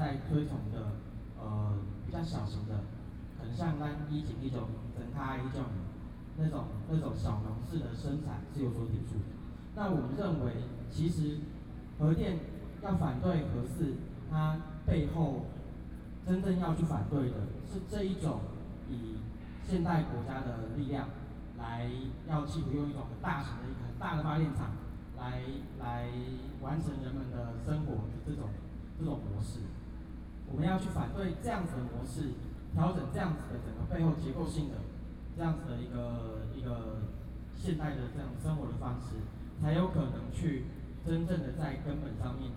Taipei, Taiwan - Anti-Nuclear Power
Different social movement groups speech, Anti-Nuclear Power, Zoom H4n+ Soundman OKM II
中正區 (Zhongzheng), 台北市 (Taipei City), 中華民國, 26 May 2013